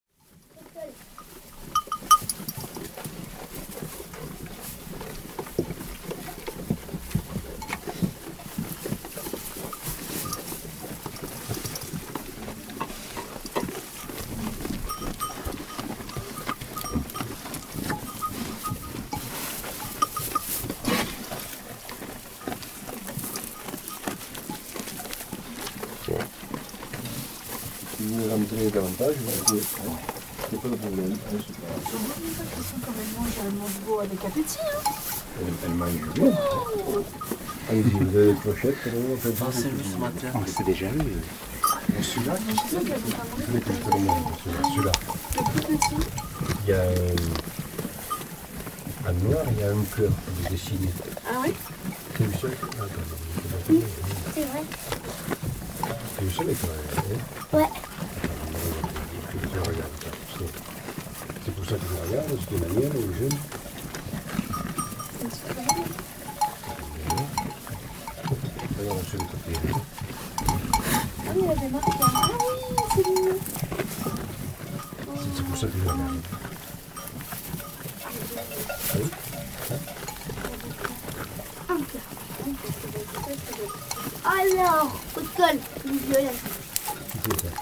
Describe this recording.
In the sheepfold, shepherd is giving feed to the animals. This is an important moment for the animals, so it's going very fast.